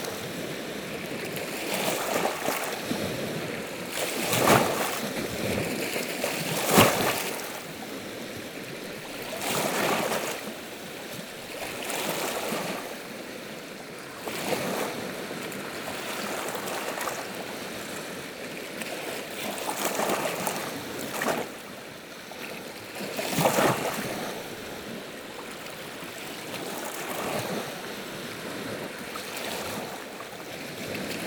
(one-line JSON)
{"title": "Noratus, Arménie - Sevan lake", "date": "2018-09-03 19:00:00", "description": "Quiet sound of the Sevan lake, which is so big that the local call it the sea.", "latitude": "40.40", "longitude": "45.22", "altitude": "1902", "timezone": "GMT+1"}